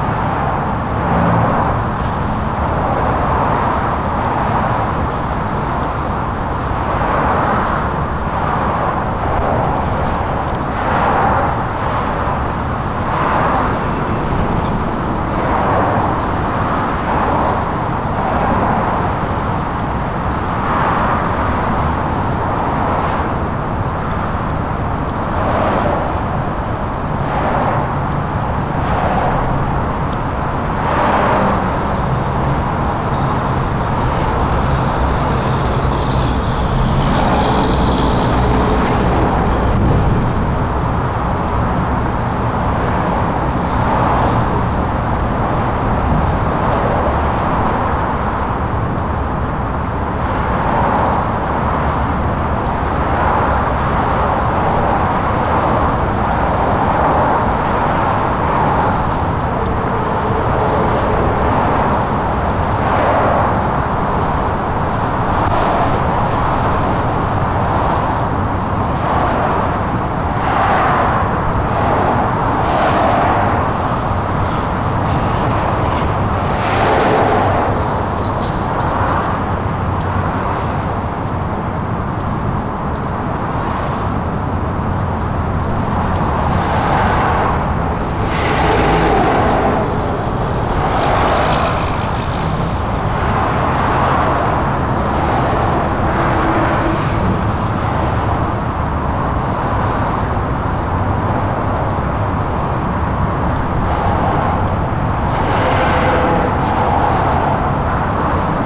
equipment used: Korg Mr 1000
This was taken on some abandon train tracks between to murals of graffitti, i walk towards some water falling from the Turcot Int. at the end